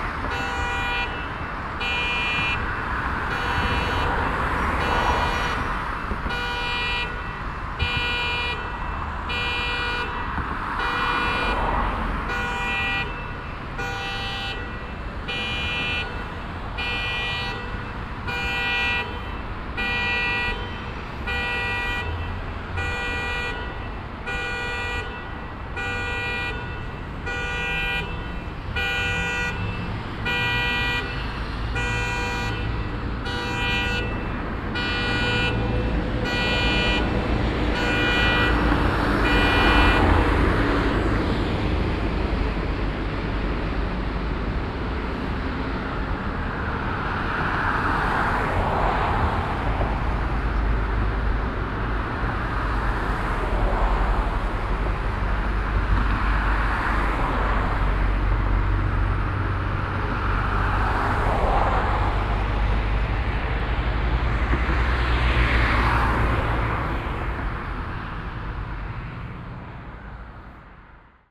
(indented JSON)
{
  "title": "vancouver, granville bridge, car alarm in parking area",
  "description": "in the night on the bridge, a car alarm starts as a transporter tries to hook it away from the parking lot. traffic passing by.\nsoundmap international\nsocial ambiences/ listen to the people - in & outdoor nearfield recordings",
  "latitude": "49.27",
  "longitude": "-123.13",
  "altitude": "20",
  "timezone": "GMT+1"
}